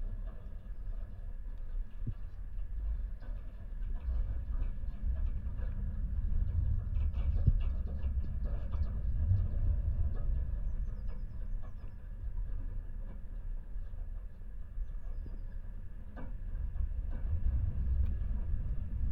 Pasiliai, Lithuania, metallic fence
strong metallic fence quarding living area of european bisons. contact microphones recording